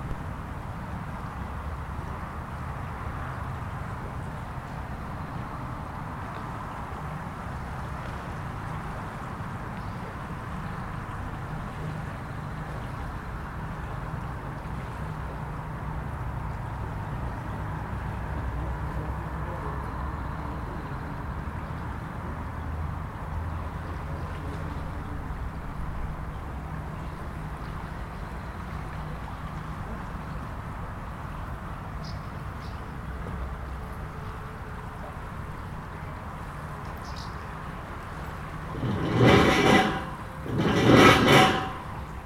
Pont routier de Cressin-Rochefort, Cressin-Rochefort, France - Sous le pont.
Via Rhôna sous le pont de Cressin_Rochefort . insectes, cyclistes passant sur les barrières canadiennes, passage d'un hors-bord .